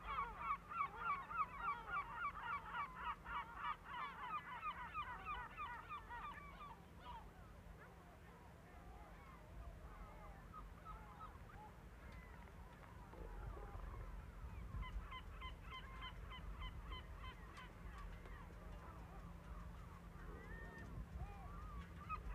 São Miguel-Azores-Portugal, Lagoa do Fogo, Seaguls